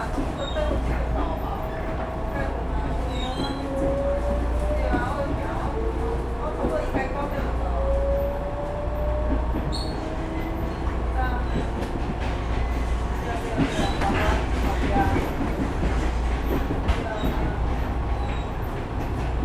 Sanmin District, Kaohsiung - inside the Trains
inside the Trains, Sony ECM-MS907, Sony Hi-MD MZ-RH1
高雄市 (Kaohsiung City), 中華民國